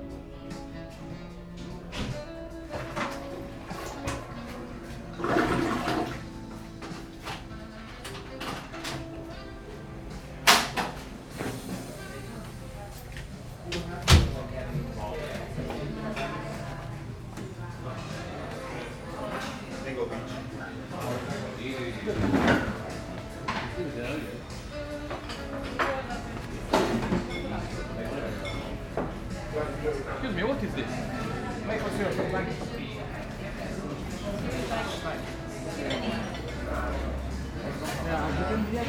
A brief glimpse into the facilities of a nameless bar on 7th Avenue somewhere south of Central Park. Mix pre 3, 2 x beyer Lavaliers.